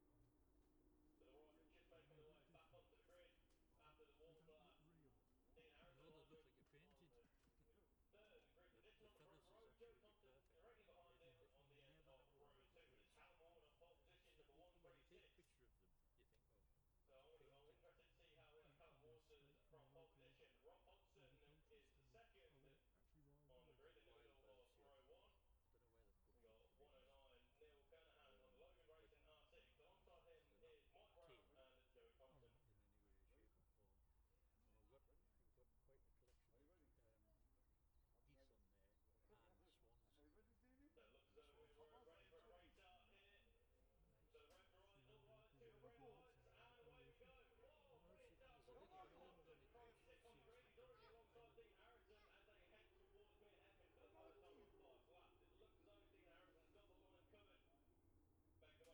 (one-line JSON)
{"title": "Jacksons Ln, Scarborough, UK - olivers mount road racing ... 2021 ...", "date": "2021-05-22 14:51:00", "description": "bob smith spring cup ... 600cc heat 3 race ... dpa 4060s to MixPre3 ...", "latitude": "54.27", "longitude": "-0.41", "altitude": "144", "timezone": "Europe/London"}